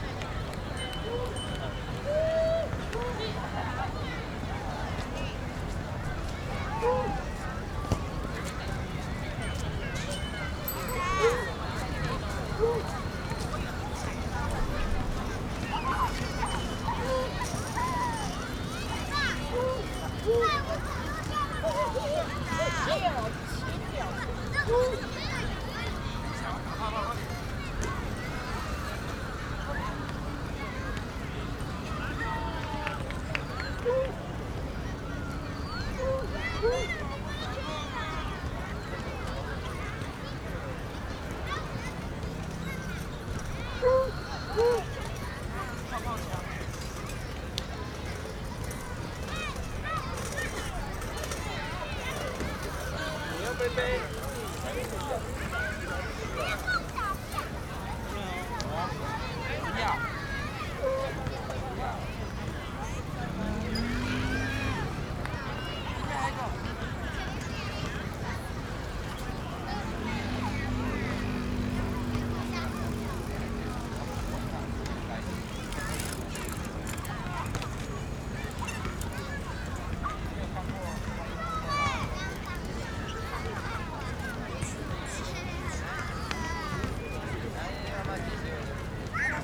Erchong Floodway, New Taipei City - Holiday in the Park
Dog, kids, basketball, Aircraft flying through, Rode NT4+Zoom H4n
New Taipei City, Taiwan, 12 February 2012